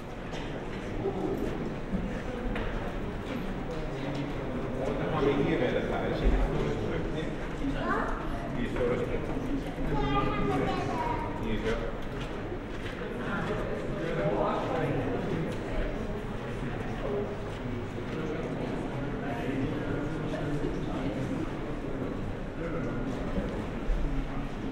dahlwitz-hoppegarten: galopprennbahn, wetthalle - the city, the country & me: racecourse, betting hall
before and between the fifth race (bbag auktionsrennen), betting people
the city, the country & me: may 5, 2013